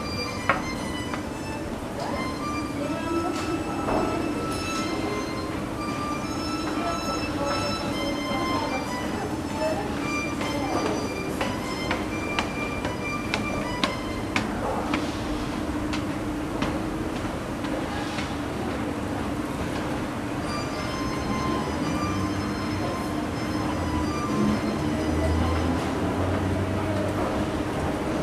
Levent metro station, a week of transit, monday morning - Levent metro station, a week of transit, thursday morning
What was yesterday?
Istanbul Province/Istanbul, Turkey